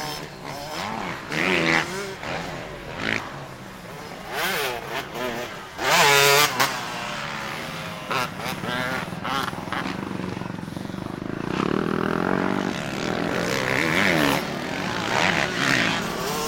Joliet MX Park
Dirt Bikes, MX Park, MX Track, MX, Mortorcycle